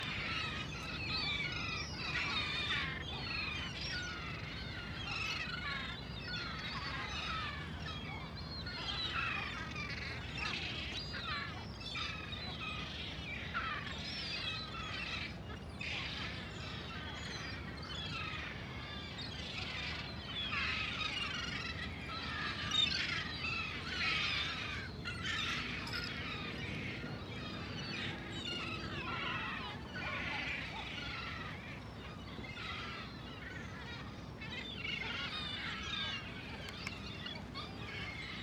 istanbul moeven - istnabul moeven
istanbul, bosporus, golden horn, animals